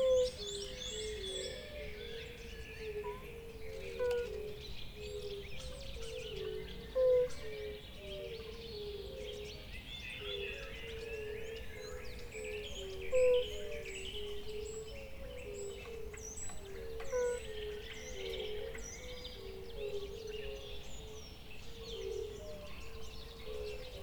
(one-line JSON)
{"title": "CHKO Dunajské luhy - Bombina bombina", "date": "2020-04-15 19:27:00", "description": "Enchanting calls of Bombina bombina at dusk. Thanks to Námer family and Andrej Chudý.\nRecorded with Sony PCM-D100", "latitude": "48.05", "longitude": "17.18", "altitude": "139", "timezone": "Europe/Bratislava"}